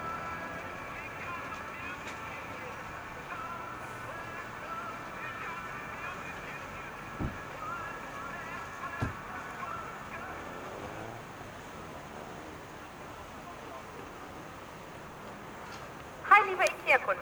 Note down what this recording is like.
Official plans of future urban development in Hamburg aim to restructure the Große Bergstrasse in Hamburg-Altona. One aspect of the plan is the construction of a large inner city store by the IKEA corporation on the site of the former department store "Frappant", actually used as studios and music venues by artists. You find the sounds of the Ikea furniture store layered on the map of the Frappant building, next to sounds of the existing space. Offizielle Umstrukturierungspläne in Hamburg sehen vor das ehemalige Kaufhaus „Frappant“ in der Altonaer Großen Bergstrasse – seit 2006 Ateliers und Veranstaltungsräume – abzureißen und den Bau eines innerstädtischen IKEA Möbelhaus zu fördern. Es gibt eine öffentliche Debatte um diese ökonomisierende und gentrifizierende Stadtpolitik. Auf dieser Seite liegen die Sounds von IKEA Moorfleet auf der Karte der Gr. Bergstrasse neben Sounds im und um das Frappant Gebäude. Eine Überlagerung von Klangräumen.